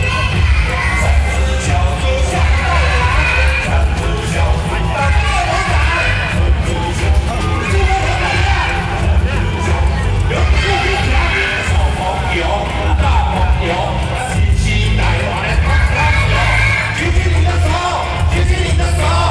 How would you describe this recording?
Ketagalan Boulevard, Occasions on Election-related Activities, Rode NT4+Zoom H4n